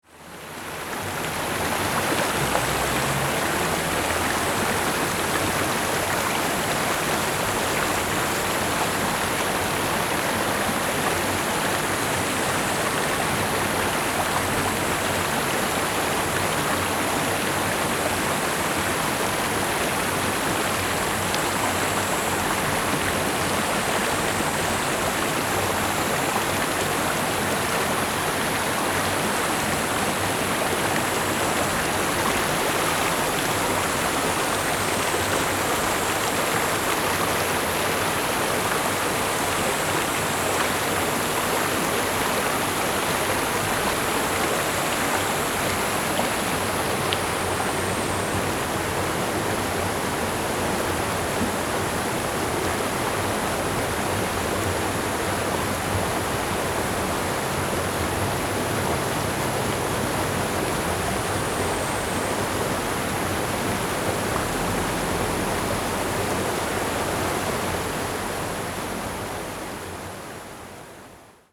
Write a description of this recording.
The sound of water streams, Zoom H4n+Rode NT4(soundmap 20120625-47 )